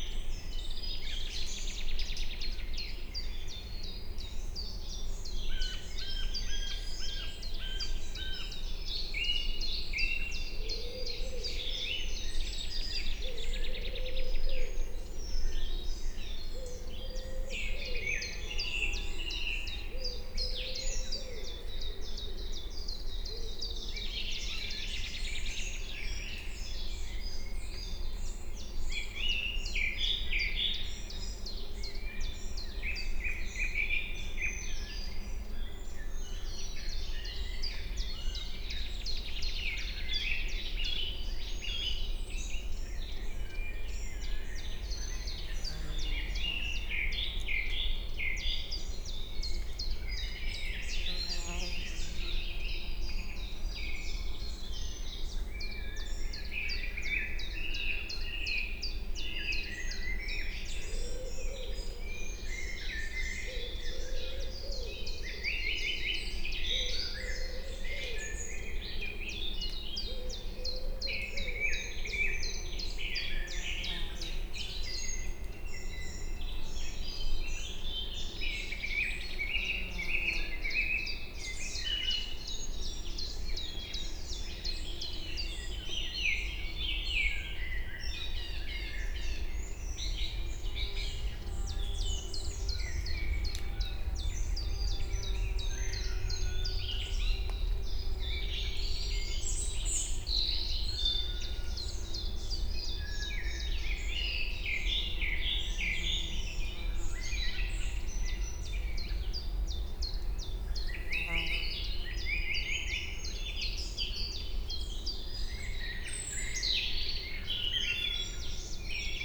Bratislava, Slovakia - Evening birdsongs in Little Carpathians forest
Part of bird evening chorus in forest (deciduous| in Small Carpathian mountains near Bratislava.